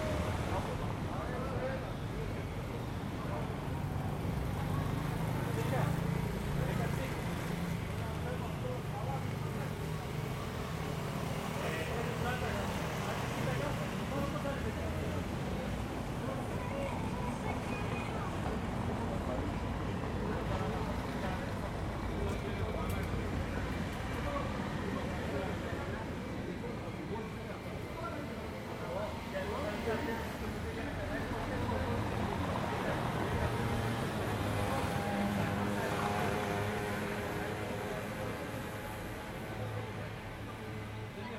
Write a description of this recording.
Mild traffic, people passing by, talking.